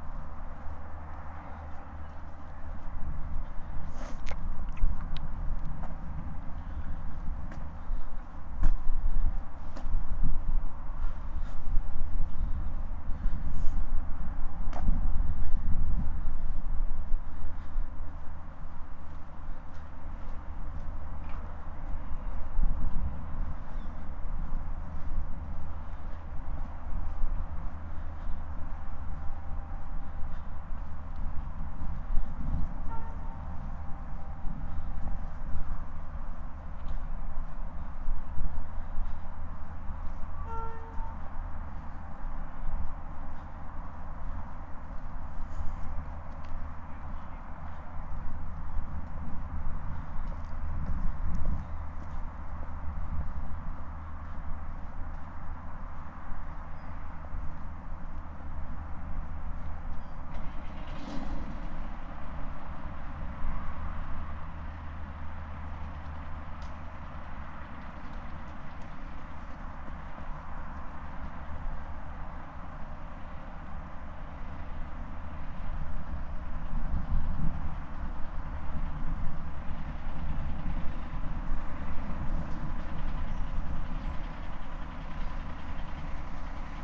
Lagan Footpath - soundwalk near the Lagan
Soundwalk near the lagan path.
Use headphones for better reproduction.
United Kingdom, European Union, 18 February 2010